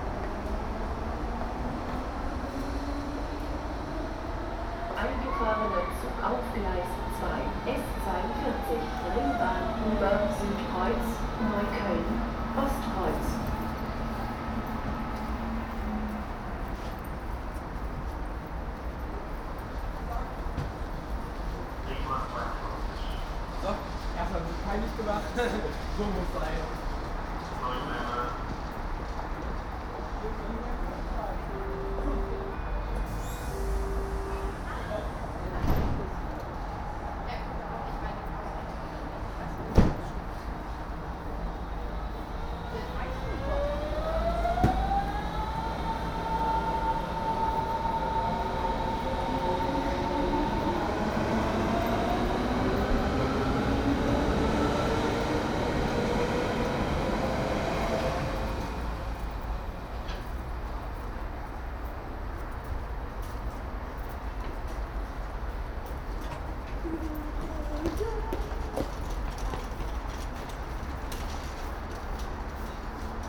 For my multi-channel work "Ringspiel", a sound piece about the Ringbahn in Berlin in 2012, I recorded all Ringbahn stations with a Soundfield Mic. What you hear is the station innsbruckerplatz in the afternoon in June 2012.